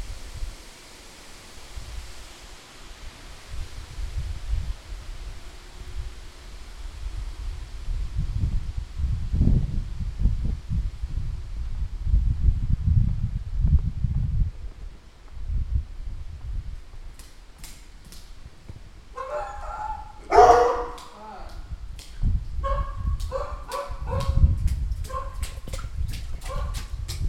Boone, NC, USA - Rainy Day Living
Rainy day, wind, dogs, water, footsteps. Zoom Recorder